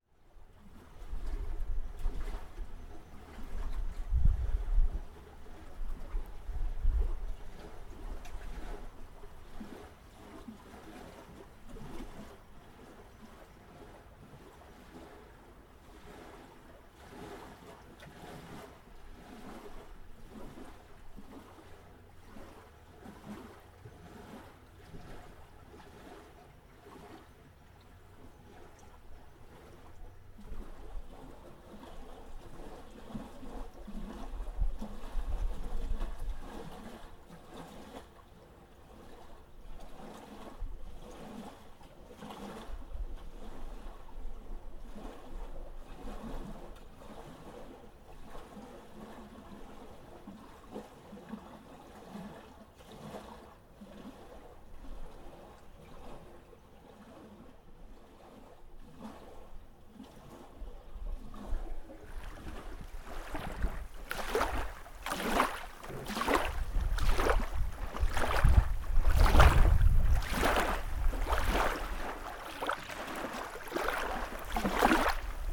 Waves recorded from dressing cabin and then near the water.
Ida-Viru maakond, Eesti, 9 May